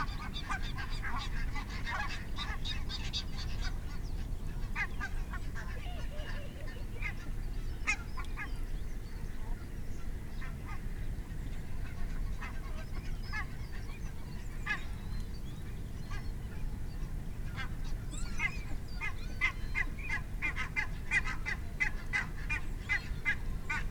Dumfries, UK - whooper swan soundscape ... bag ...
whooper swan soundscape ... bag ... dpa 4060s clipped to bag to zoom f6 ... folly hide ... bird calls ... barnacle geese ... curlew ... song thrush ... moorhen ... shoveler ... great tit ... teal ... canada geese ... wigeon .... starling ... crow ... lapwing ... dunnock ... time edited unattended extended recording ...